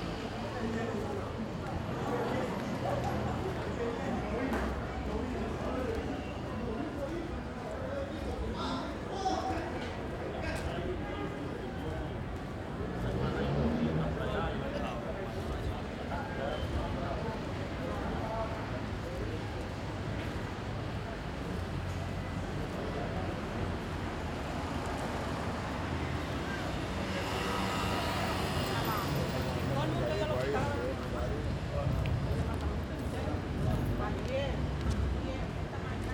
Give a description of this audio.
Early evening walk through Old Havana in the direction of El Capitolio.